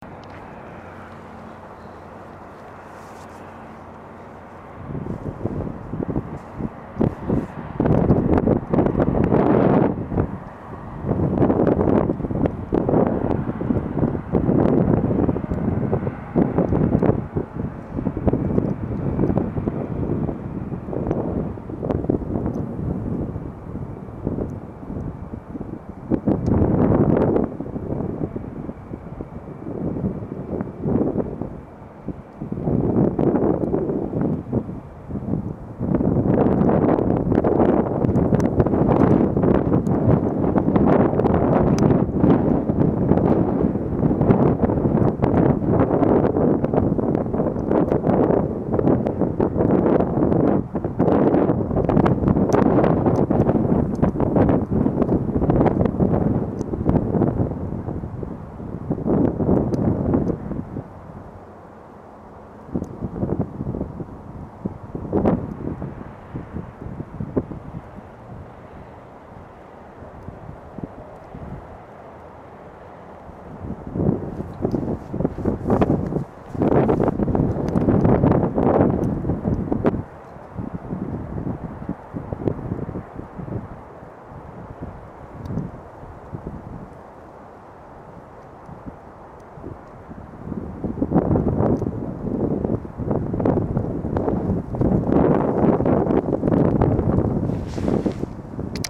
ул. Крымский Вал, строение, Москва, Россия - Moscow river
On the Bank of the Moscow river. It's snowing and the wind is blowing. Winter. Evening.
Центральный федеральный округ, Россия, 4 February